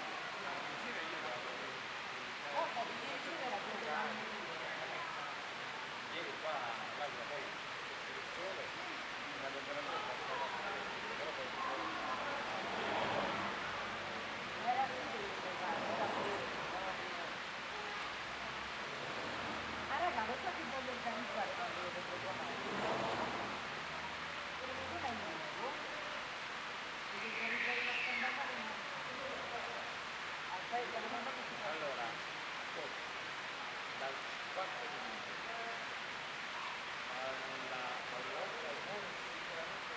L'Aquila, Fontana delle 99 Canelle - 2017-05-22 08-99 Cannelle
L'Aquila AQ, Italy, 22 May